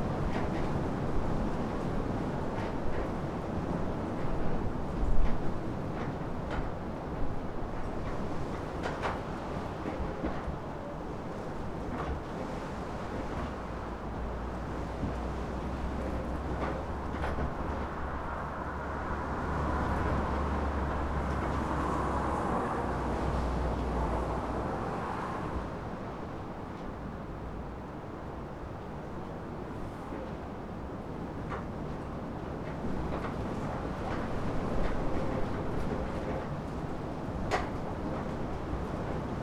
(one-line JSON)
{"title": "Lithuania, Meiliunai, coming to concert", "date": "2011-12-10 12:01:00", "description": "people come to concert to local cultural center", "latitude": "56.02", "longitude": "24.80", "altitude": "66", "timezone": "Europe/Vilnius"}